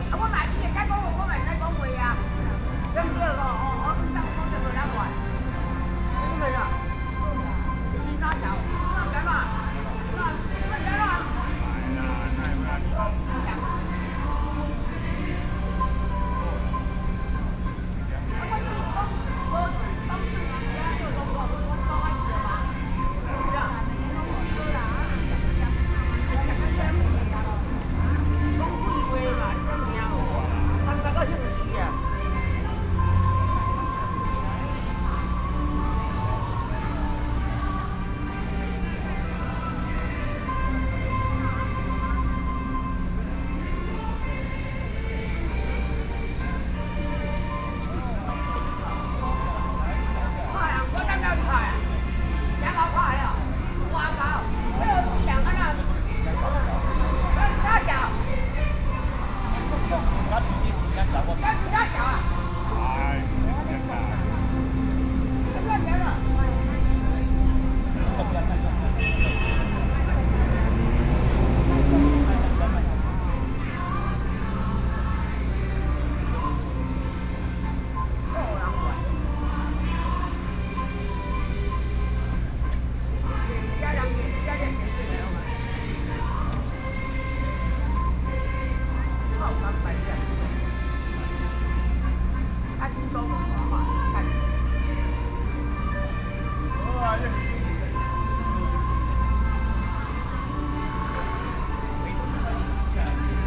{"title": "Ministry of the Interior, Taipei City - Quarrel", "date": "2013-08-19 15:34:00", "description": "In the sit-in protests next, Two middle-aged people are quarreling, Because of differences in political ideas, Sony PCM D50 + Soundman OKM II", "latitude": "25.04", "longitude": "121.52", "altitude": "15", "timezone": "Asia/Taipei"}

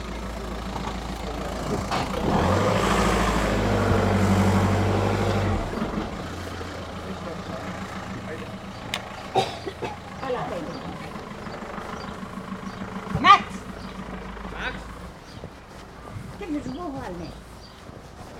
{"title": "Grčna, Nova Gorica, Slovenija - Iskanje smeri", "date": "2017-06-07 12:08:00", "description": "Recorded with Sony PCM-M10", "latitude": "45.95", "longitude": "13.65", "altitude": "111", "timezone": "Europe/Ljubljana"}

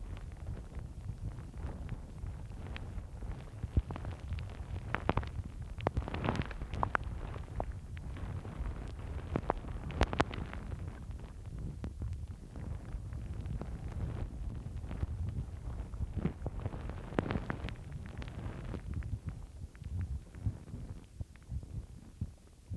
{"title": "Glasson Moss, Cumbria, UK - Sphagnum Moss", "date": "2013-04-24 15:15:00", "description": "Hydrophones in Sphagnum Moss\nGlasson Moss Nature Reserve", "latitude": "54.94", "longitude": "-3.19", "altitude": "13", "timezone": "Europe/London"}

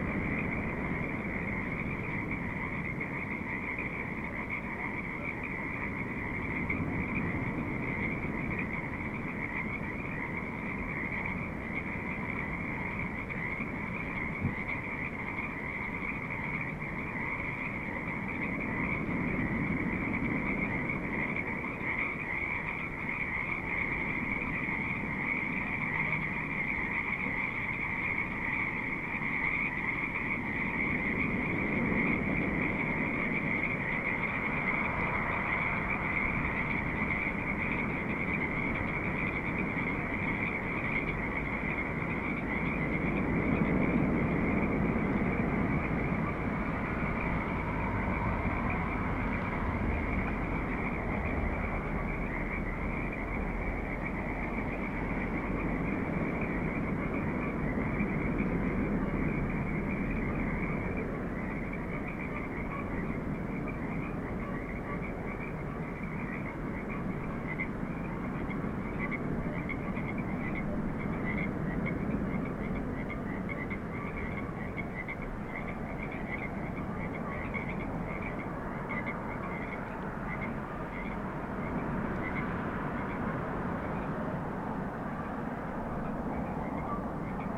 {"title": "Quiet night with frogs and sea, Headlands CA", "description": "a still calm evening provided good recording conditions to hear the spring sounds in the valley", "latitude": "37.83", "longitude": "-122.52", "altitude": "28", "timezone": "Europe/Tallinn"}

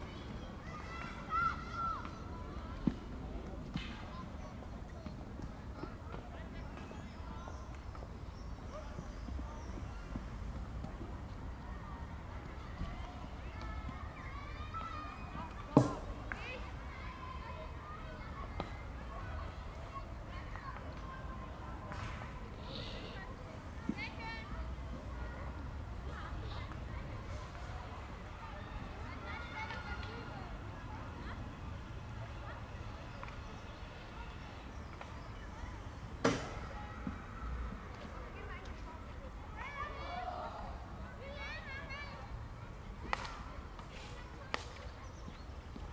{"title": "koeln, field-hockey", "description": "recorded june 20th, 2008.\nproject: \"hasenbrot - a private sound diary\"", "latitude": "50.94", "longitude": "6.88", "altitude": "71", "timezone": "GMT+1"}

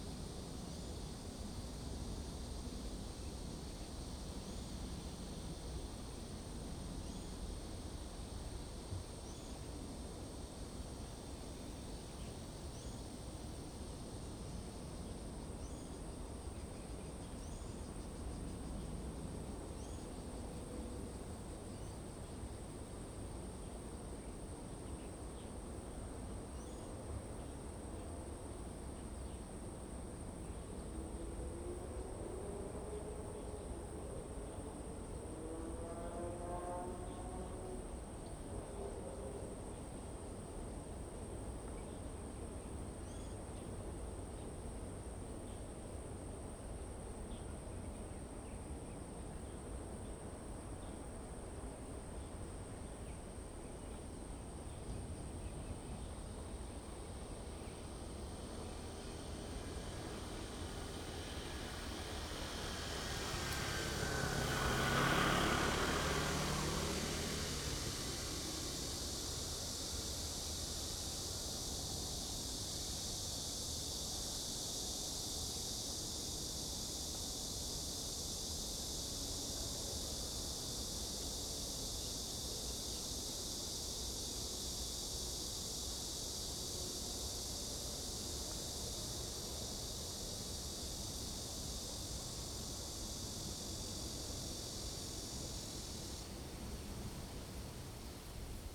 {"title": "吳厝, Daying Rd., Daxi Dist. - Riverside Park", "date": "2017-08-08 16:26:00", "description": "The plane flew through, wind, bird, Cicada sound, Riverside Park\nZoom H2n MS+XY", "latitude": "24.90", "longitude": "121.30", "altitude": "71", "timezone": "Asia/Taipei"}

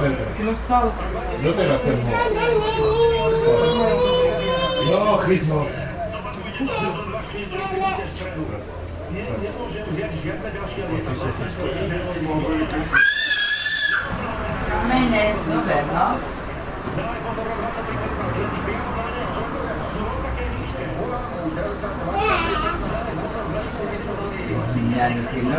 {"title": "devinska nova ves, hostinec kolaj", "date": "2011-09-11 17:04:00", "description": "atmosphere in the beergarden of the pub kolaj. in the background you can hear a slovak dubbed radioplay about 9/11", "latitude": "48.22", "longitude": "16.98", "altitude": "155", "timezone": "Europe/Bratislava"}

